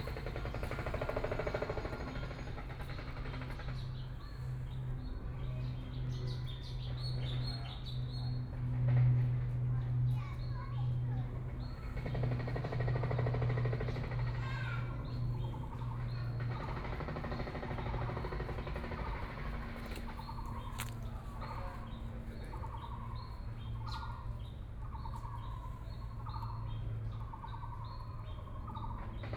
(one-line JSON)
{"title": "碧湖公園, Taipei City - in the park", "date": "2014-03-15 16:55:00", "description": "Sitting in the park, Construction noise, Birdsong, Insects sound, Aircraft flying through\nBinaural recordings", "latitude": "25.08", "longitude": "121.58", "timezone": "Asia/Taipei"}